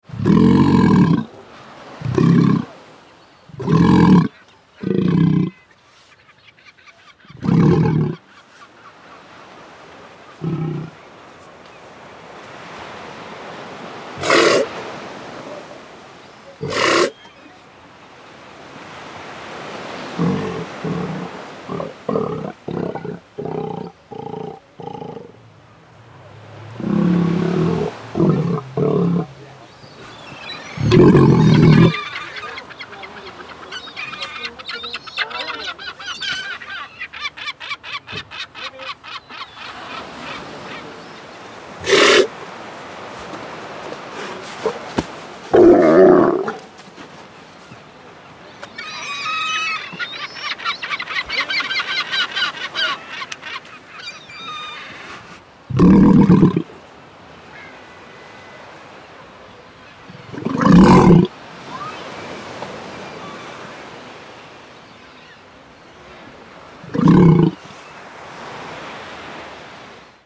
Caleta Portales - Sea Lion
Sea Lion screaming at the port "Caleta Portales" close to Valparaiso.
Recording during the workshop "A Media Voz" by Andres Barrera.
MS Setup Schoeps CCM41+CCM8 in a Zephyx Cinela Windscreen